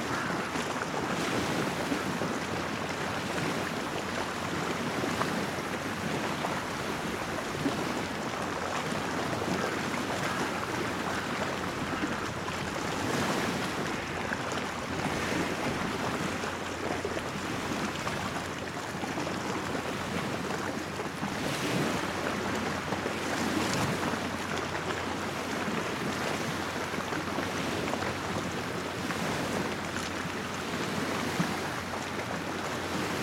waves of Nida, water on breaker #1
waves of Nida water sounds